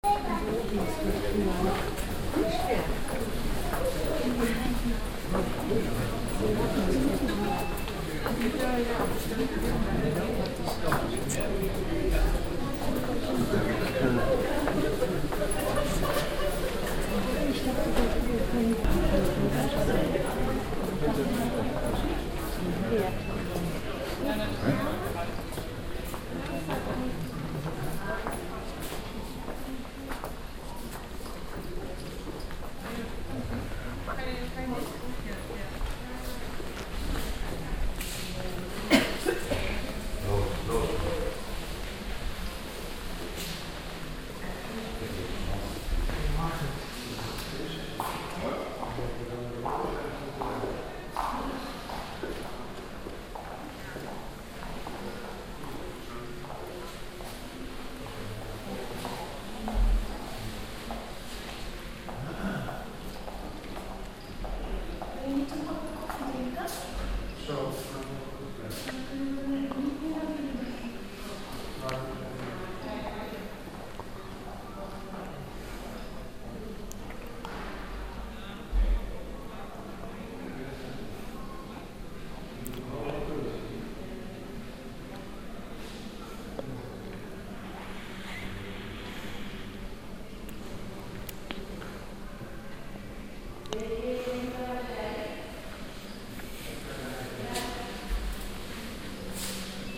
otterlo, houtkampweg, kröller-müller museum
in the museum, peopple moving, dutchg voices, a machine sculpture
international soundmap : social ambiences/ listen to the people in & outdoor topographic field recordings